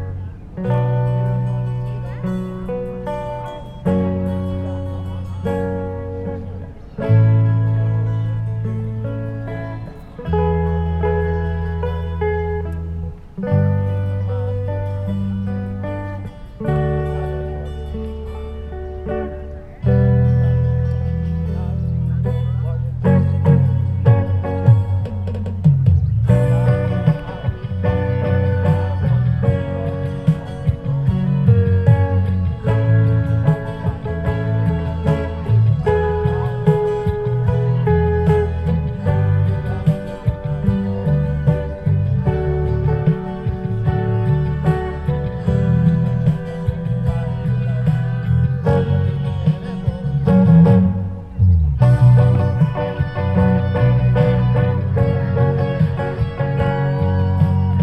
Forgetful Busker, Bethesda Fountain, Central Park, New York, USA - Busker
At The Bethesda Fountain while we munch our lunch this busker seems oblivious that his microphone is unplugged. The crowd don't mind and help him out.
MixPre 3 with 2 x Beyer Lavaliers.
United States